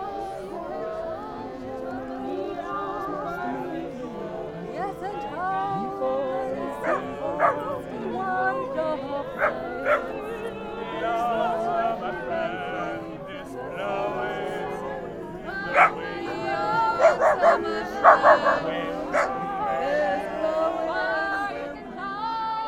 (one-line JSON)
{"title": "Tempelhofer Feld, Berlin, Deutschland - Tempeltofu excerpt #3", "date": "2012-08-18 15:45:00", "description": "final of the Tempeltofu performance", "latitude": "52.48", "longitude": "13.41", "altitude": "44", "timezone": "Europe/Berlin"}